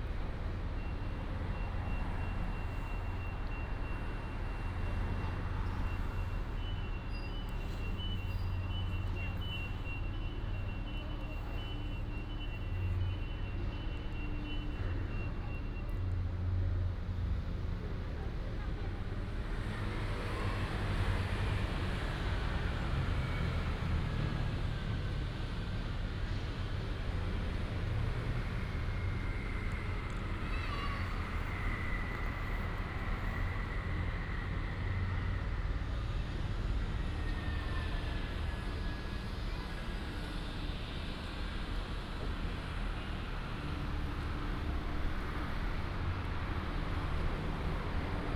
頭重里, Zhudong Township - In the square
In the square of the station, Construction sound, Traffic sound